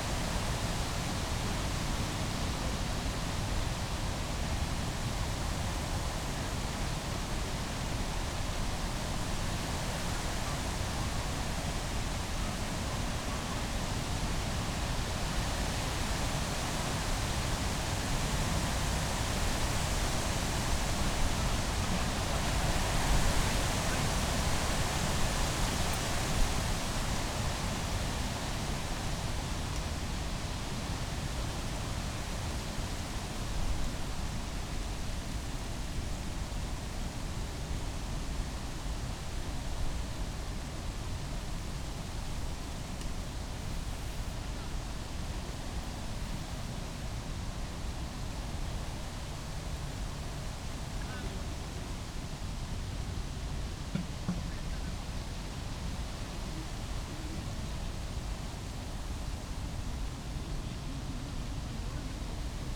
Tempelhofer Feld, Berlin - wind in birch trees
place revisited (often here, if possible...) wind in the birches
(Sony PCM D50, Primo EM172)